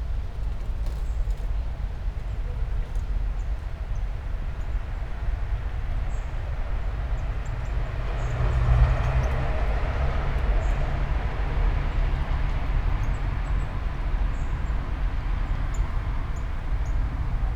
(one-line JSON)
{"title": "all the mornings of the ... - aug 16 2013 friday 07:22", "date": "2013-08-16 07:22:00", "latitude": "46.56", "longitude": "15.65", "altitude": "285", "timezone": "Europe/Ljubljana"}